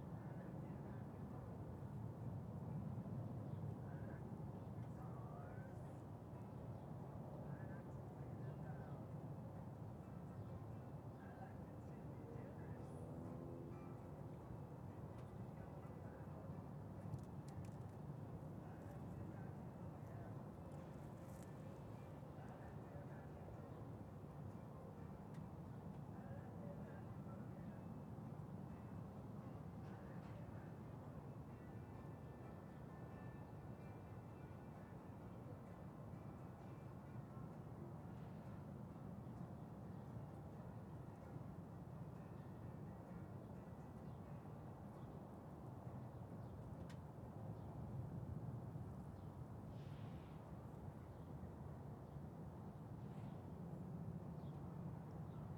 Forgotten Star Brewery - Forgotten Star
Recorded in the parking lot of the Forgotten Star Brewery adjacent to the railroad tracks leading to the Northtown yard in Fridley, MN
Anoka County, Minnesota, United States